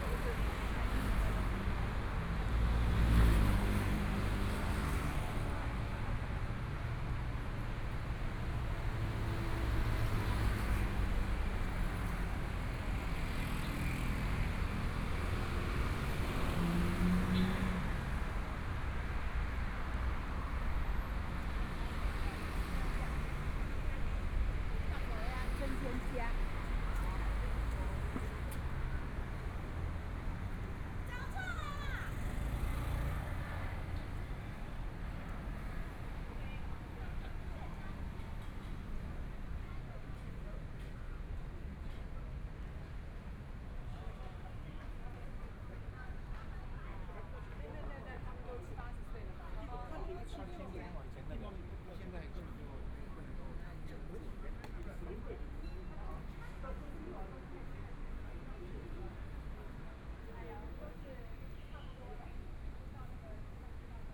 SPOT-Taipei Film House, Taipei - Environmental sounds
Environmental sounds, walking on the Road, Traffic Sound, Binaural recordings, Zoom H4n+ Soundman OKM II